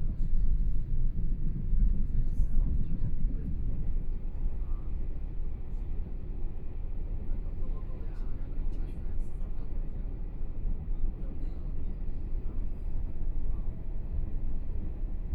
Wujie Township, Yilan County - Tze-Chiang Train
from Yilan Station to Luodong Station, Binaural recordings, Zoom H4n+ Soundman OKM II